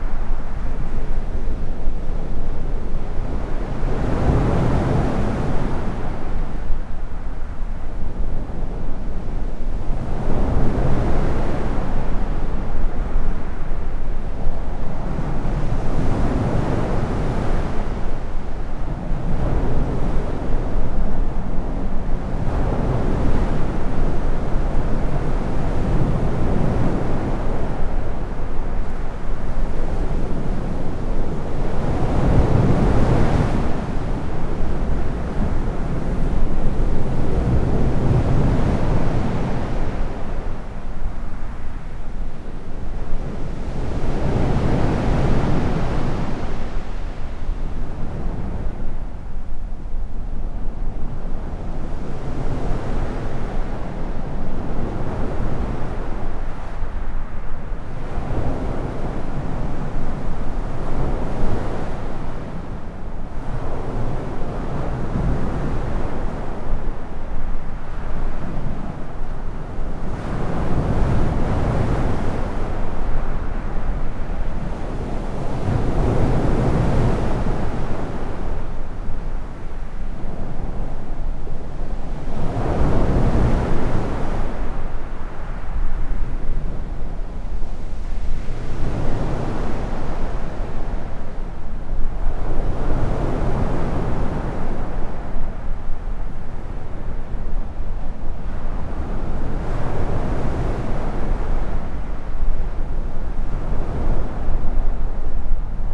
Waves Under The Pier, Southwold, Suffolk, UK - Waves
Recording under the pier produces a slightly different acoustic to the gently breaking waves.
Captured with a MixPre 3 and 2 x Rode NT5s
England, United Kingdom